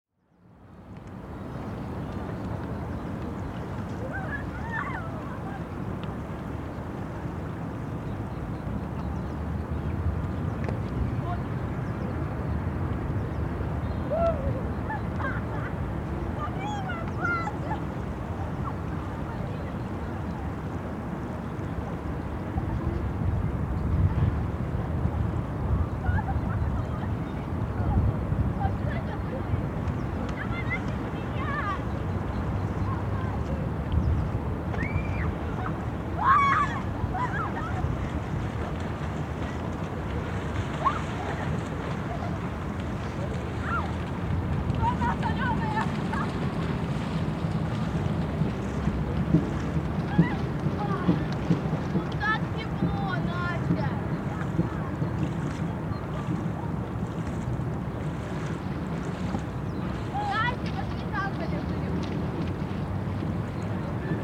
Stroomi Beach Tallinn, seaside
recording from the Sonic Surveys of Tallinn workshop, May 2010
21 May 2010, 15:24